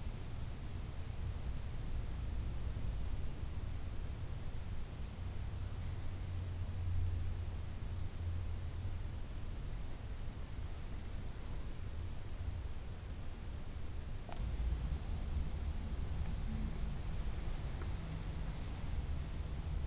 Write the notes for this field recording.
about two minutes of the inner space of the small church of saint peter, in st. peter ording; ca. zwei minuten stille, bei gleichzeitigem wind in der kirche st. peter in st. peter ording / ca. due minuti di silenzio dalla chiesa di san pietro di st peter ording, con qualche macchia del vento fuori dalla chiesa